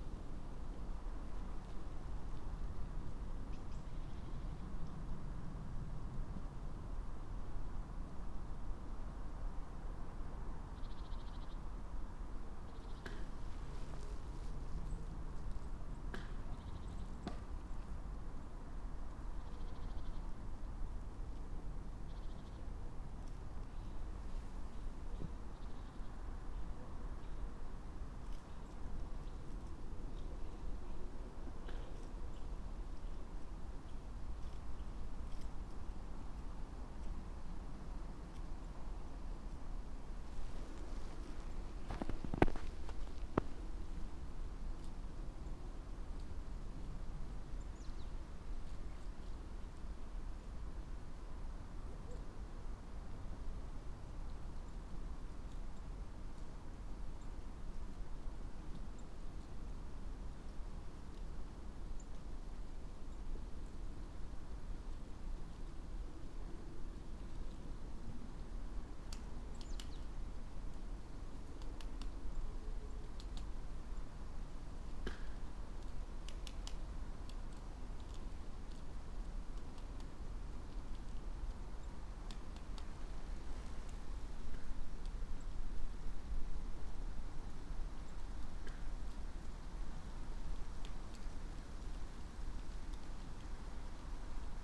two trees, piramida - creaking trees
snow, birds, gentle wind, dry leaves, traffic noise beyond the hill ... and few tree creaks